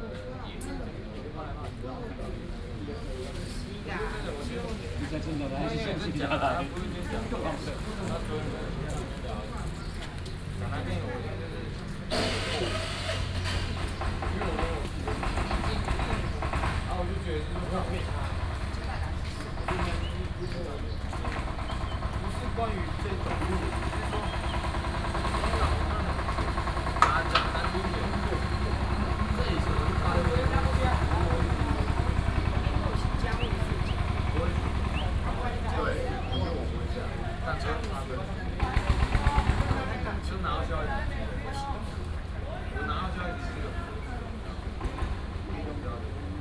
四維公園, Da'an District - in the Park
in the Park, Construction noise, Elderly chatting, Student
Taipei City, Taiwan, June 2015